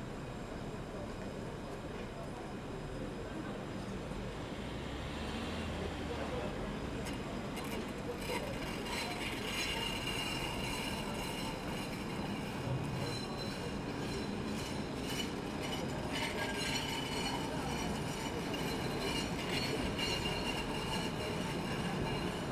Place de la Gare Grenoble evening curfew - Place de la Gare Grenoble evening curfew=sundscape
"Place de la Gare, Grenoble, evening curfew in the time of COVID19": Soundscape.
Chapter 172-bis (add on august 18 2022) of Ascolto il tuo cuore, città. I listen to your heart, city
Thursday, June 3rd, 2021: recording from hotel room window in front of the Grenoble railway station during evening curfew. Almost than one year and four months after emergency disposition due to the epidemic of COVID19.
Start at 9:31 p.m. end at 9:52 p.m. duration of recording 21’20”
3 June 2021, 21:31